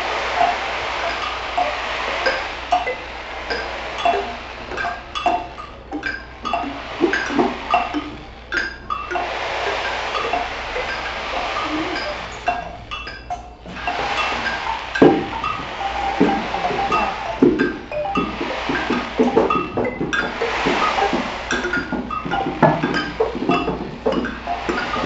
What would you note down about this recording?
After appreciating various soundscapes on this site, we recorded the soundscape of the music classroom. The students remained silent for the first minute to record the sounds coming from outside the room. Then, each student received an instrument and participated in a free improvisation, creating the music throughout its performance. When students from another class passed through the classroom door, we returned to the silence and restarted playing later to finish our music. Depois de apreciar várias paisagens sonoras no site, gravamos a paisagem sonora da sala de música. Os alunos permaneceram em silêncio durante o primeiro minuto para registrar os sons fora da sala de música. Em seguida, cada aluno recebeu um instrumento e participou de uma improvisação livre, criando a música à medida que ela era executada. Quando os alunos de outra turma passavam pela porta da sala, voltamos ao silêncio e só voltamos mais tarde para terminar a música.